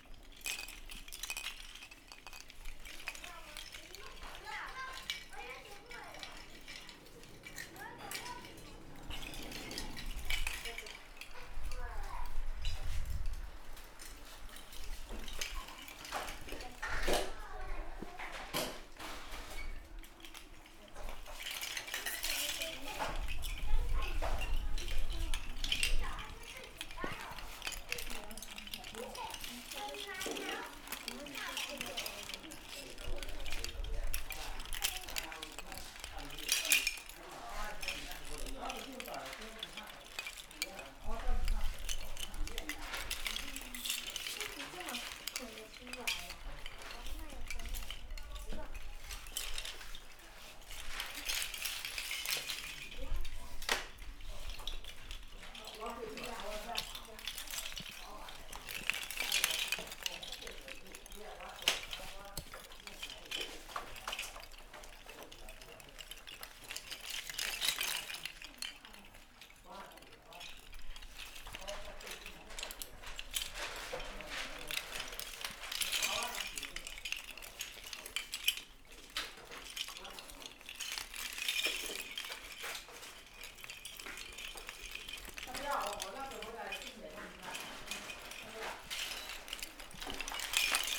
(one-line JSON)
{"title": "Guangming Rd., Fangyuan Township - Oyster Shell", "date": "2014-03-08 16:42:00", "description": "Oyster Shell, in the Small village, Children's sound, Traffic Sound\nZoom H6 MS+ Rode NT4, Best with Headphone( SoundMap20140308- 5 )", "latitude": "23.93", "longitude": "120.32", "altitude": "5", "timezone": "Asia/Taipei"}